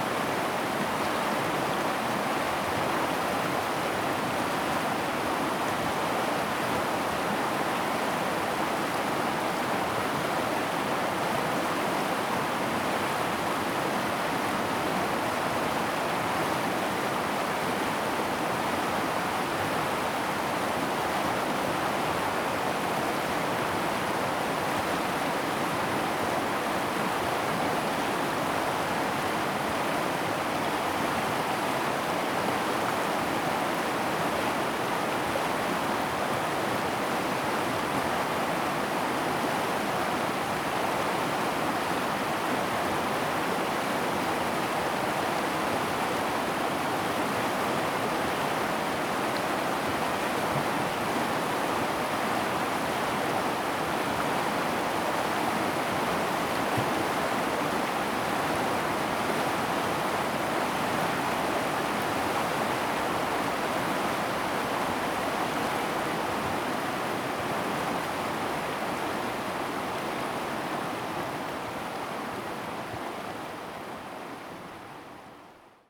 太麻里溪, Jialan, Jinfeng Township 台東縣 - Stream sound
In the river, Stream sound
Zoom H2n MS+XY
Taitung County, Jinfeng Township, 東64鄉道, 3 April, ~16:00